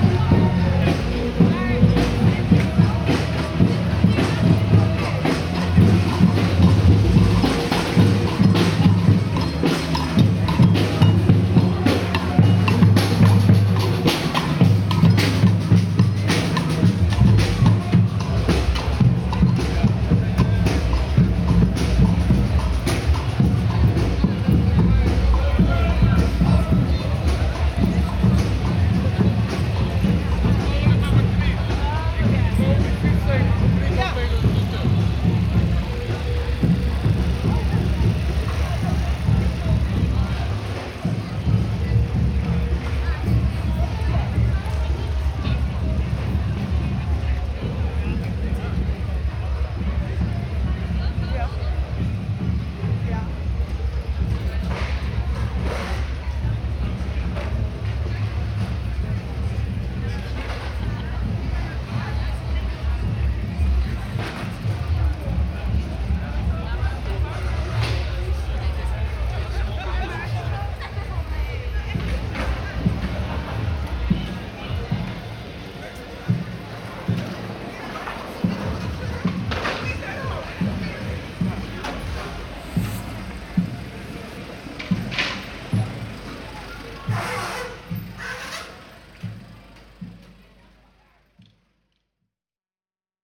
Leuvehaven, Rotterdam, Netherlands - Huizen voor mensen, niet voor winst
Huizen voor mensen, niet voor winst. Recording of a demonstration calling for an end to the housing crisis in the Netherlands. At the beginning and the end of the recording, it is possible to listen to a few skaters, they usually gather in an open space in front of the Maritime Museum. It is also possible to listen to two groups chanting "Huizen voor mensen, niet voor winst", to a speaker someone brought to lay music and a group of drummers. The demonstration would walk towards the city center to finally reach Blaak.